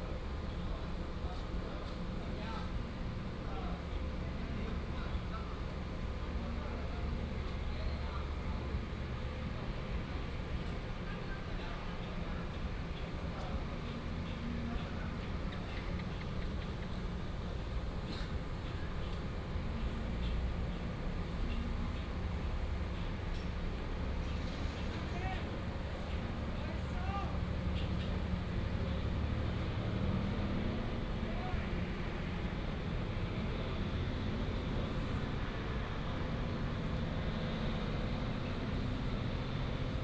Village Restaurant Area, traffic sound
Binaural recordings, Sony PCM D100+ Soundman OKM II